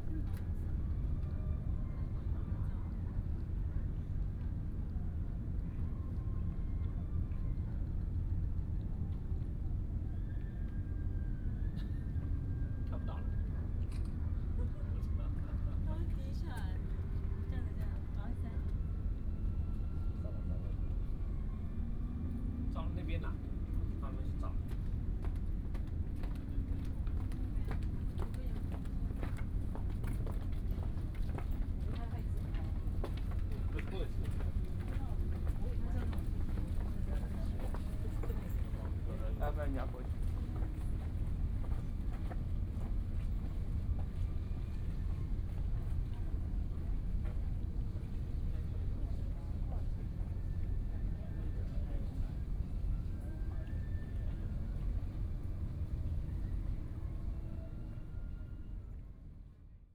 淡水區新生里, New Taipei City - Sitting on the river bank
Sitting on the river bank, Ambient sound, Footsteps